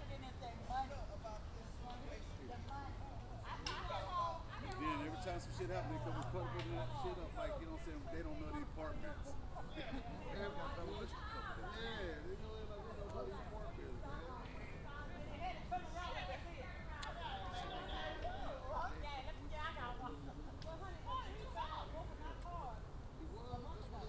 3400 Richmond Parkway - Richmond California Crime Scene
There was a high speed chase that ended when the cops pushed the robber's car off the road. The cops had shot and killed the criminal when he came at them with a machete. This was about an hour or two after those events had played out. The crime scene was at the entrance of the apartments, but they had it blocked off all the way up to almost the security booth.
Richmond, CA, USA, 2 August